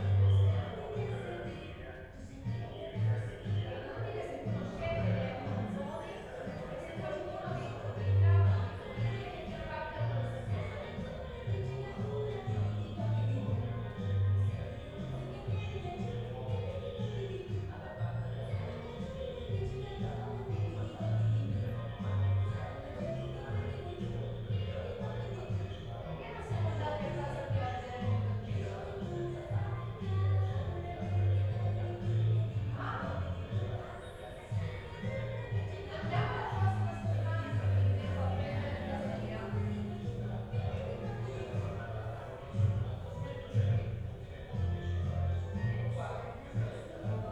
inner yard window, Piazza Cornelia Romana, Trieste, Italy - radio, music